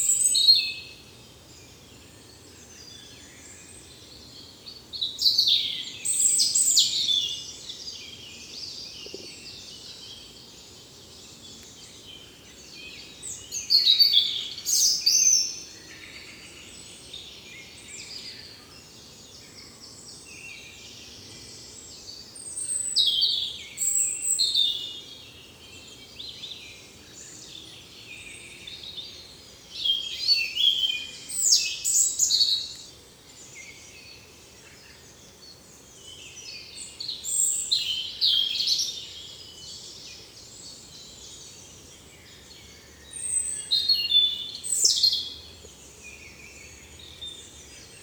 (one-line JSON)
{"title": "Montigny-le-Tilleul, Belgique - Birds in the forest", "date": "2018-06-03 09:15:00", "description": "Robin. At the backyard : a Blackbird and Common Chaffinch.", "latitude": "50.37", "longitude": "4.35", "altitude": "195", "timezone": "Europe/Brussels"}